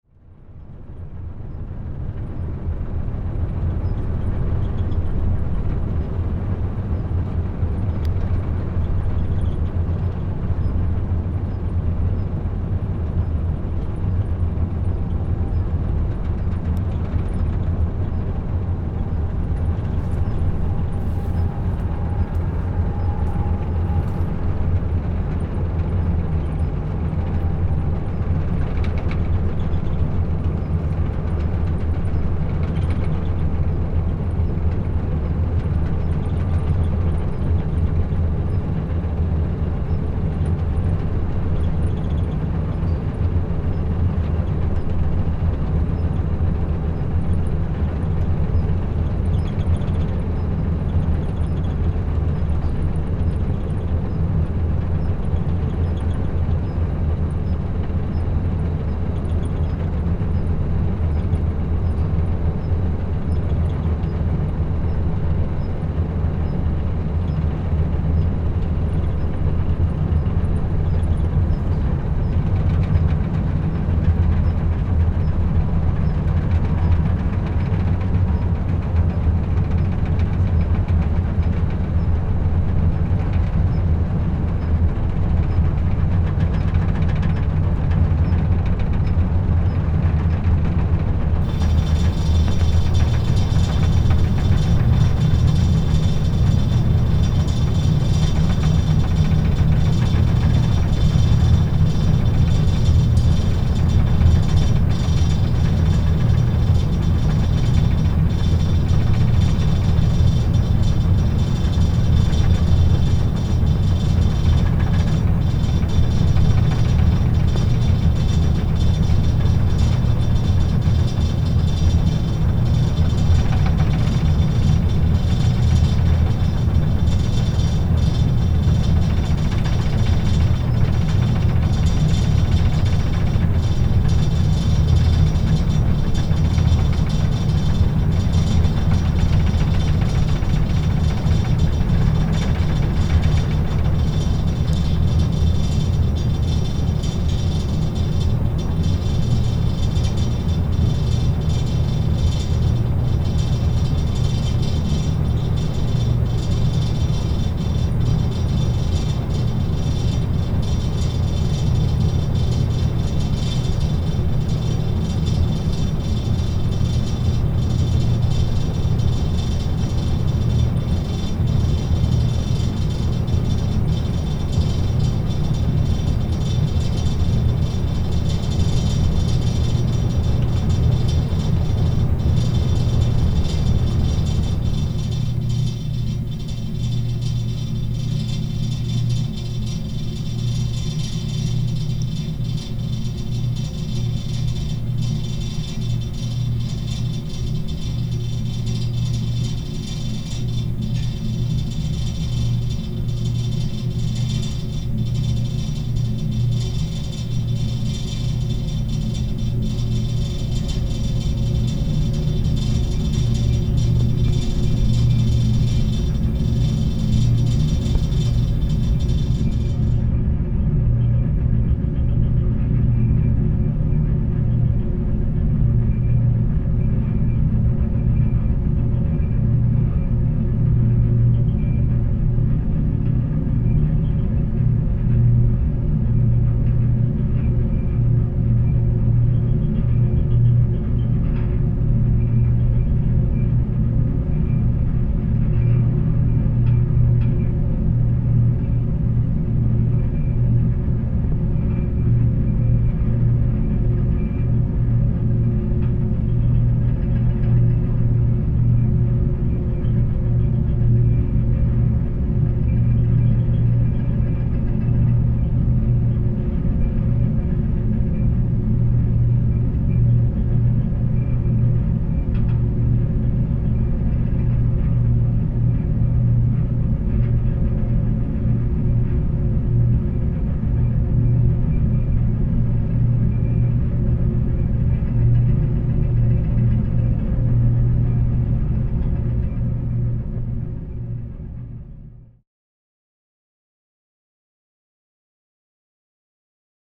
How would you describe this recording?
...a conveyor belt that brings material from the nearby coast to industrial sites all along the road to the Mureung Valley...